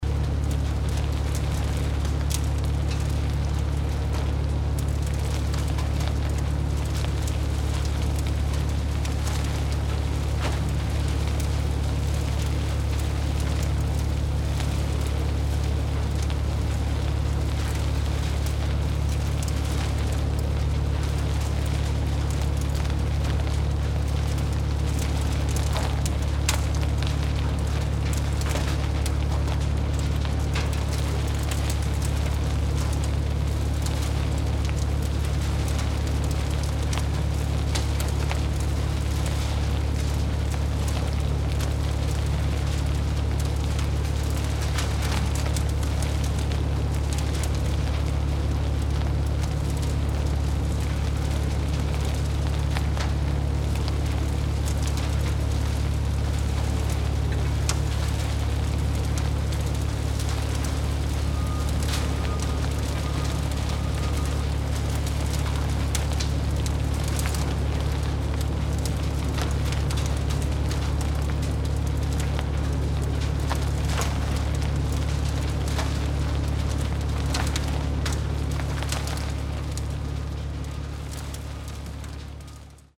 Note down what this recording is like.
transportband kompostiermaschine - wärme ca 50 grad lufttemperatur gefühlte 100 prozent, - soundmap nrw, project: social ambiences/ listen to the people - in & outdoor nearfield recordings